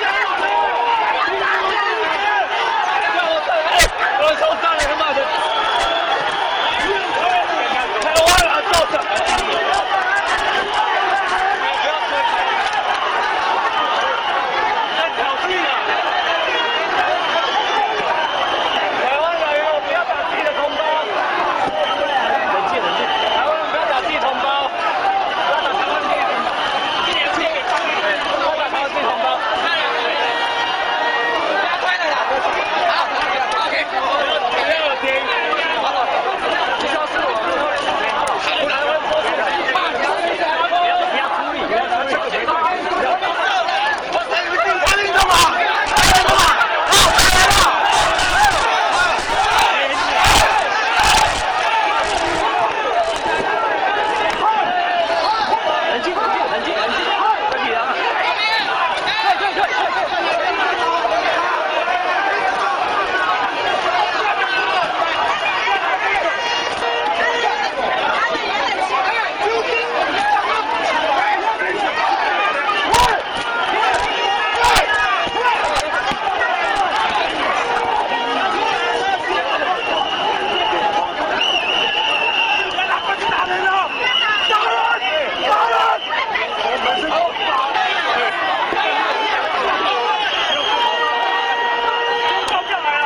Sec., Zhongshan N. Rd., Zhongshan Dist. - Protest and confrontation
Police are working with protesting students confrontation, Sony ECM-MS907, Sony Hi-MD MZ-RH1